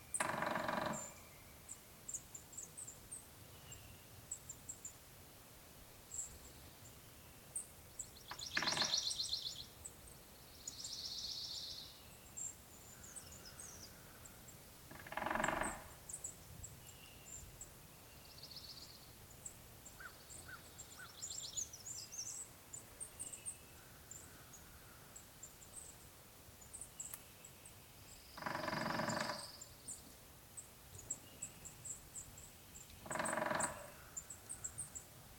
Excerpt from overnight recording. Microphones attached to a tree facing a wildlife pond in a remote forested area. The pond is surrounded by pine trees and the constant sound of wind in the trees. The night was also cold and relatively quiet and the recording setup was not ideal for a quiet soundscape. Never-the-less, this excerpt captures the pre-dawn soundscape at about 5 am, with pileated woodpecker calls and woodpecker drumming, crows and other birds not yet identified.
Arkansas, United States of America